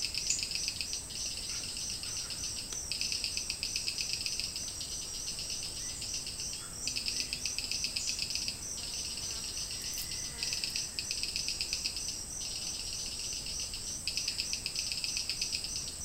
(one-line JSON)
{"title": "La Chorrera, Amazonas, Colombia - AMBIENTE SELVA", "date": "2001-09-18 15:28:00", "description": "AMBIENTE SELVA CERCA A LA CHORRERA, GRABADORA TASCAM DA-P1 Y MICROFONO PV-88 SHURE. GRABACION REALIZA POR JOSÉ LUIS MANTILLA GÓMEZ.", "latitude": "-1.42", "longitude": "-72.76", "altitude": "122", "timezone": "GMT+1"}